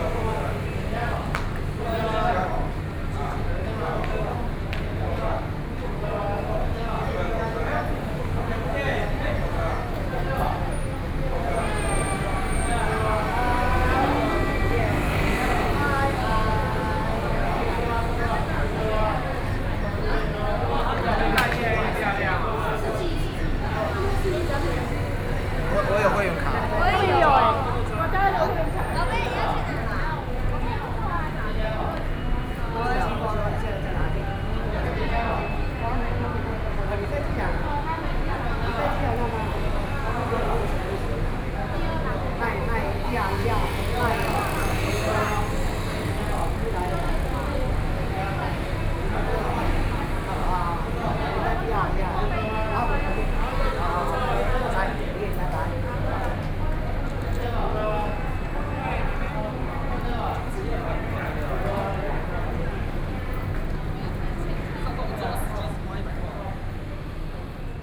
From the train station platform through the underground, Towards the station exit, Taxi drivers are recruiting people aboard, Sony PCM D50 + Soundman OKM II